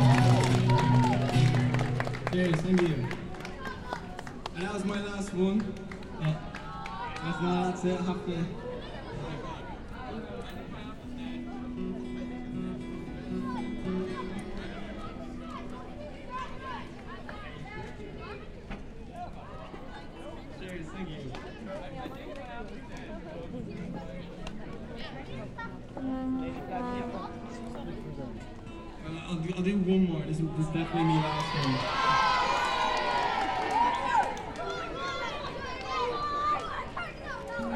Grafton Street, Dublin, Irlande - music
Music on Grafton Street with children singing. A moment of joy and happines
Recording devices : Sound Device Mix pre6 with 2 primo EM172 AB30cm setup
Leinster, Ireland, 16 June, ~17:00